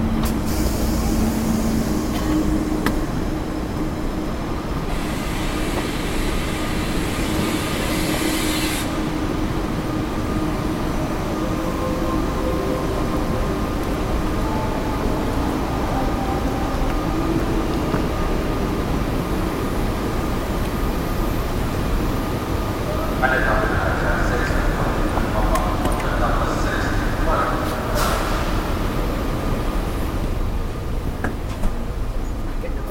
cologne, main station, train arriving
recorded june 6, 2008. - project: "hasenbrot - a private sound diary"
Cologne, Germany